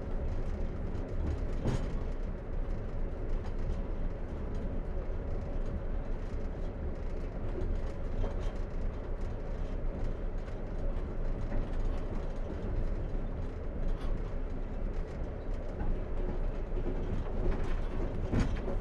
Somewhere between Tashkent and Bukhara, Uzbekistan - Night train
the night train!